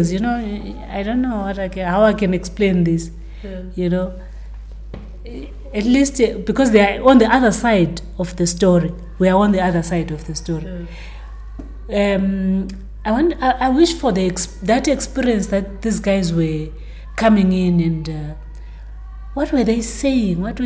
Amakhosi Cultural Centre, Makokoba, Bulawayo, Zimbabwe - this side and that side…
… Thembi continues pinpointing how she wants to re-stage and bring to life the inter-cultural clashes and fusions which the production portraits….
Thembi Ngwabi was trained as an actress at Amakhosi and performed as bass guitarist with the all-women band “Amakhosigasi”, she’s training young people as the leader of the Amakhosi Performing Arts Academy APAA.
The complete interview with Thembi Ngwabi is archived at: